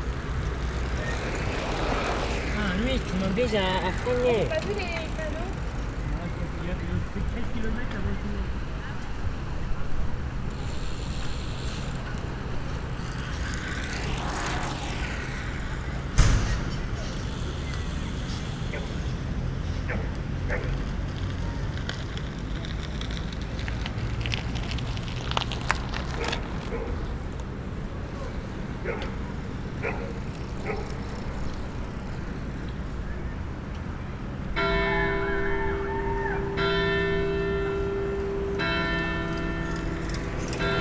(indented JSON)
{
  "title": "Rue du Vieux Village, Duingt, France - Piste cyclable Duingt",
  "date": "2022-08-16 15:54:00",
  "description": "Au bord de la piste cyclable à Duingt près du lac d'Annecy, beaucoup de cyclistes de toute sorte, bruits ambiants de ce lieu très touristique.",
  "latitude": "45.83",
  "longitude": "6.20",
  "altitude": "475",
  "timezone": "Europe/Paris"
}